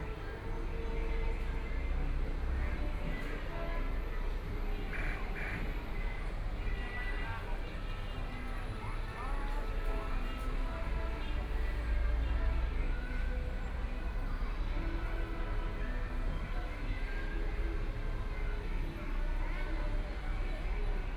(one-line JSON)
{"title": "Lu Xun Park, Hongkou District - Noisy sound Recreation Area", "date": "2013-11-23 12:41:00", "description": "Sitting in the square outside cafe, Loud sound inside the park play area, Binaural recording, Zoom H6+ Soundman OKM II", "latitude": "31.27", "longitude": "121.48", "altitude": "10", "timezone": "Asia/Shanghai"}